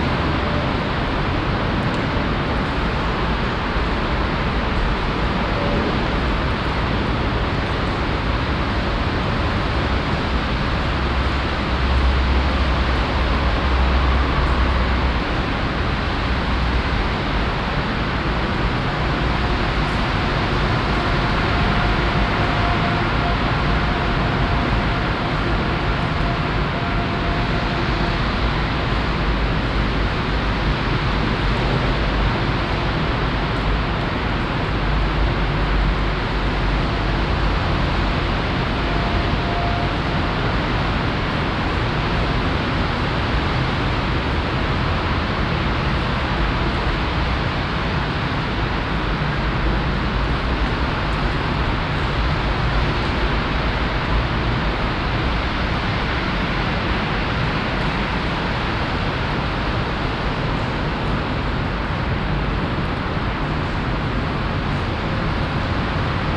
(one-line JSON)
{"title": "Oberkassel, Düsseldorf, Deutschland - Düsseldorf, gutted former church", "date": "2012-11-23 16:40:00", "description": "Inside a former church, that has been gutted and opened on the wallside for a complete reconstruction. The sound of traffic from the nearby highway and rain dripping on and in the building.\nThis recording is part of the exhibition project - sonic states\nsoundmap nrw - sonic states, social ambiences, art places and topographic field recordings", "latitude": "51.24", "longitude": "6.74", "altitude": "41", "timezone": "Europe/Berlin"}